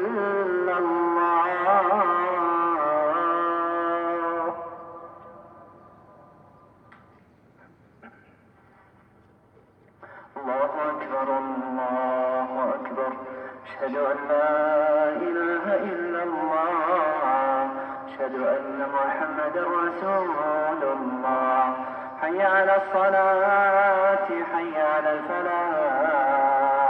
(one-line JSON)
{"title": "Unnamed Road، Bahreïn - Almahadeer city, Al Dur, Bahreïn", "date": "2021-05-28 18:34:00", "description": "Almahadeer city, Al Dur, Bahreïn\nMosquée - Appel à la prière de 18h34", "latitude": "25.98", "longitude": "50.61", "altitude": "18", "timezone": "Asia/Bahrain"}